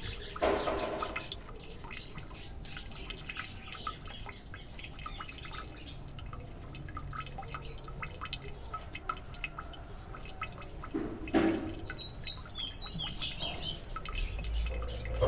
Cruz das Almas, BA, Brasil - PÁSSAROS E TORNEIRA
PCM
passarinhos e torneira